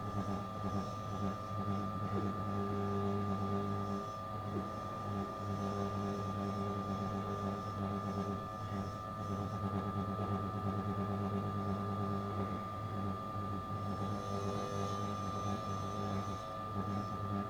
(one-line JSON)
{"title": "Köln, Richmondstr. / Breitestr. - rotating signpost", "date": "2011-01-30 23:55:00", "description": "signpost with rotating advertising and clock on top. interesting sounds coming out of a little hole in the post. quiet street at midnight, sundday night.", "latitude": "50.94", "longitude": "6.95", "altitude": "63", "timezone": "Europe/Berlin"}